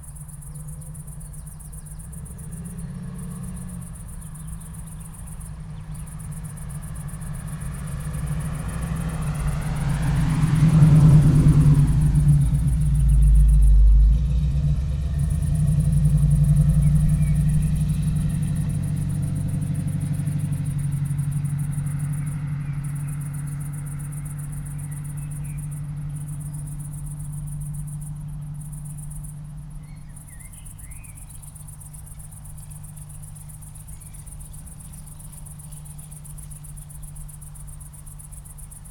Sootbörn, Hamburg Niendorf - airport runway, quiet ambience
Hamburg Niendorf, surprisingly quiet summer evening ambience at the edge of the runway of Hamburg airport, probably because aircrafts depart and descend from an alternative runway because of wind conditions. Muscle car is passing by at the end of the recording. Short soundwalk with artist colleagues from the Kleine Gesellschaft für Kunst und Kultur, Hamburg
(Tascam DR100Mk3, DPA4060)